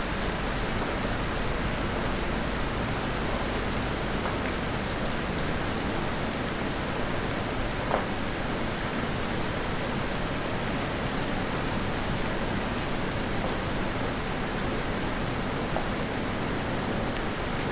Alausai, Lithuania, rain in abandoned building
Heavy rain in some abandoned (from Soviet times) building
Utenos rajono savivaldybė, Utenos apskritis, Lietuva, 9 August 2021